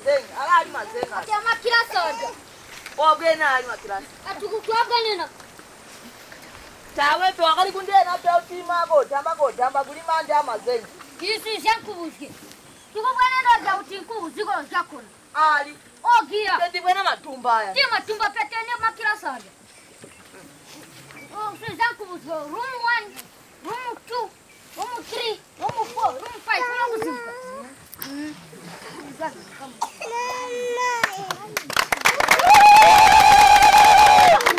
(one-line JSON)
{"title": "Damba Primary School, Binga, Zimbabwe - Lets dance...", "date": "2012-11-05 11:25:00", "description": "… after all the speeches, pupils are entertaining the guests with poems, little drama plays, music and dance…", "latitude": "-17.71", "longitude": "27.45", "altitude": "613", "timezone": "Africa/Harare"}